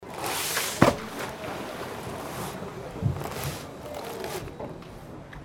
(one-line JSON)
{"title": "langenfeld, wasserskianlage - langenfeld, wasserski abgleiten", "description": "automtische wasserskianlage, nachmittags\nhier: abgleiten der ski vor dem wasser einsprung\nsoundmap nrw - sound in public spaces - in & outdoor nearfield recordings", "latitude": "51.11", "longitude": "6.91", "altitude": "42", "timezone": "GMT+1"}